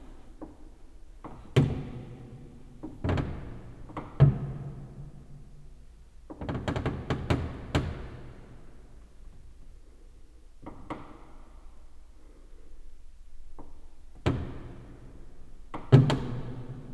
(binaural rec, please use headphones) recorded in an empty church. at the beginning just the ambience of the church, some noises from the street coming through the door. around one minute mark I started to move about on the wooden bench, pressing on it with my feet and arms. you can hear the crackle of the wood reverberated in the church. and rustle of my jacket. and my breathing. gain was set very high. (Roland R-07 + Luhd PM-01 bins)
Śrem, Poland, 1 September, ~10:00